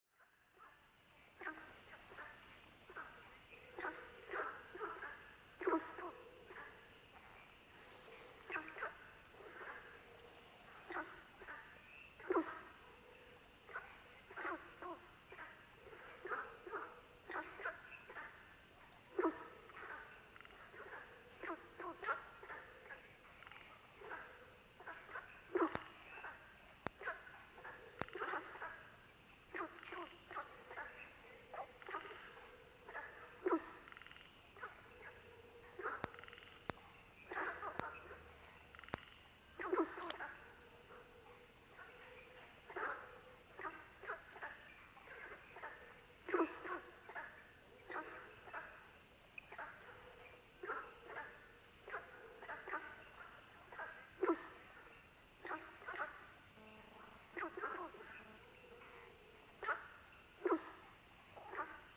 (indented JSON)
{
  "title": "三角崙, 魚池鄉五城村 - Frogs sound",
  "date": "2016-04-19 19:01:00",
  "description": "Ecological pool, In the pool, Frogs chirping, Bird sounds, Firefly habitat area\nZoom H2n MS+XY",
  "latitude": "23.93",
  "longitude": "120.90",
  "altitude": "756",
  "timezone": "Asia/Taipei"
}